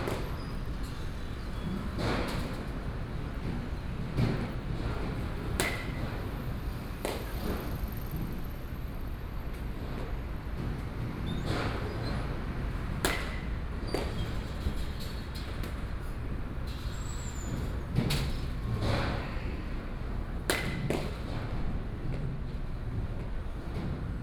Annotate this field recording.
Baseball Batting Field, Zoom H4n+ Soundman OKM II